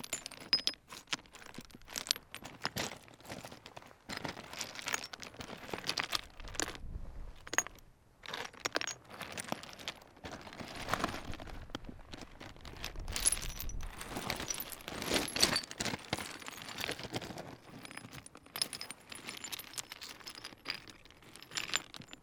Montdardier, France - The causse stones
The stones in this very desertic place are special. As I said the the Lozere mounts, where stones creechs, you won't find this elsewhere. In fact here in this huge limestone land, the stones sing. It makes a sound like a piano, with shrill sounds and acidulous music note. That's what I wanted to show with stones here and that's not very easy. These stones are called "lauzes".